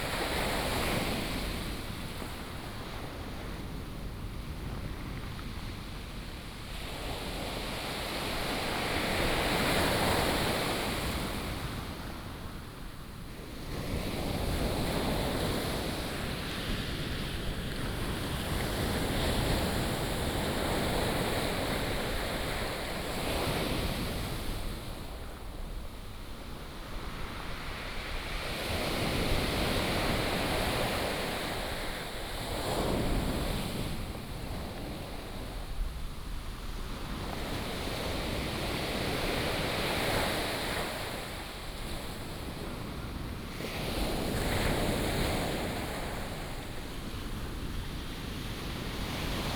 大武海濱公園, Dawu Township, Taitung County - Sound of the waves
Sound of the waves, traffic sound
Binaural recordings, Sony PCM D100+ Soundman OKM II